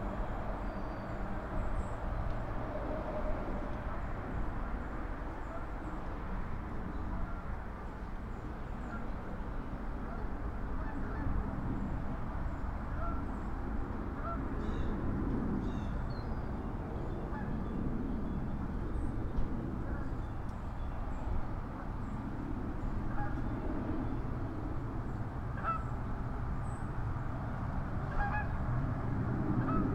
Upper Deerfield Township, NJ, USA - park drive
geese, mallards, blue jays and other birds compete with nearby road traffic noise